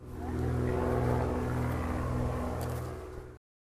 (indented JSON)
{
  "title": "Anholt, Danmark - Plane passing",
  "date": "2012-08-20 09:40:00",
  "description": "The recording is made by the children of Anholt School and is part of a sound exchange project with the school in Niaqornat, Greenland. It was recorded using a Zoom Q2HD with a windscreen.",
  "latitude": "56.70",
  "longitude": "11.56",
  "altitude": "7",
  "timezone": "Europe/Copenhagen"
}